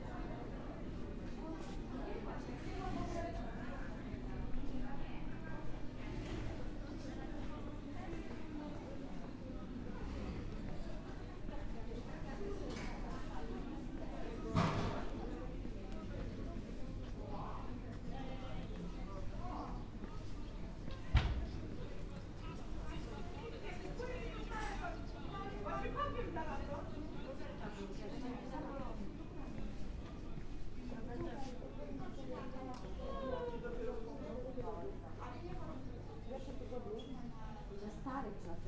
cedynia, osinów dolny: market hall - the city, the country & me: soundwalk through market hall
binaural soundwalk through market hall with numerous shops, cafes etc.
the city, the country & me: may 10, 2014
Poland, 10 May 2014